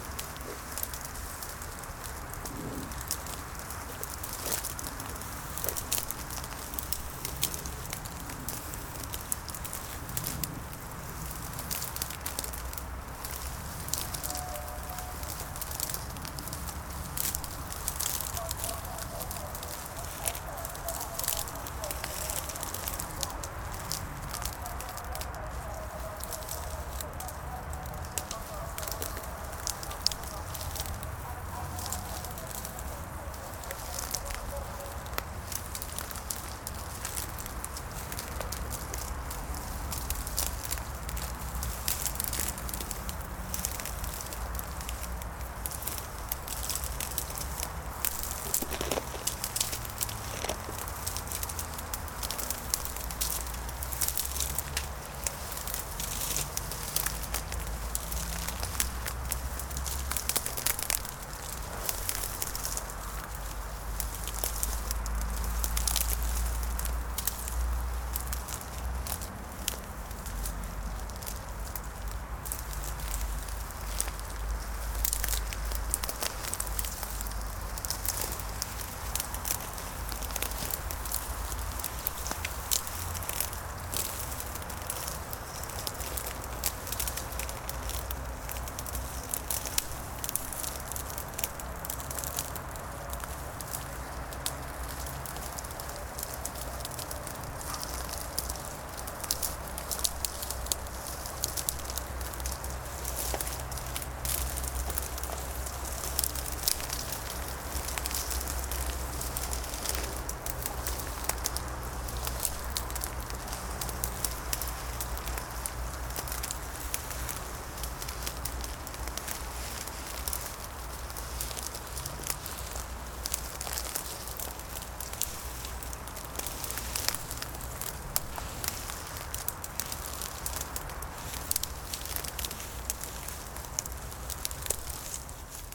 cracking brush action, Polesie, Łódź, Poland - cracking brush action, Polesie, ?ód?, Poland
the sound of walking through dead brush made during a sound workshop in Lodz organized by the Museum Sztuki.
4 April